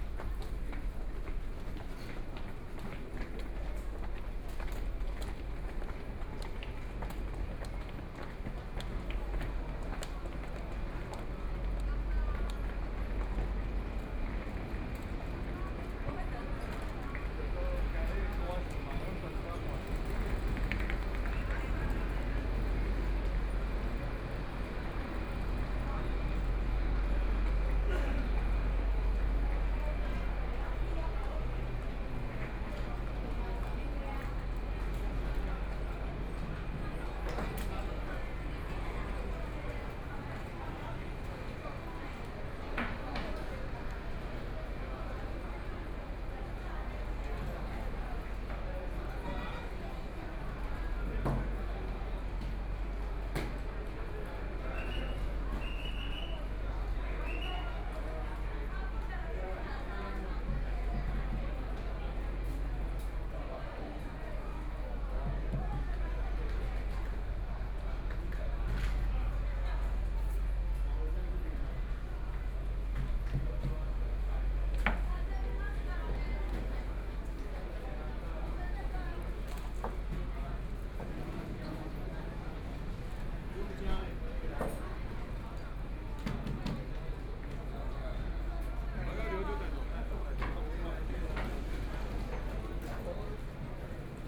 Hualien Station, Hualien City - soundwalk
Messages broadcast station, From the station platform, Via underground passage, Then out of the station, Binaural recordings, Zoom H4n+ Soundman OKM II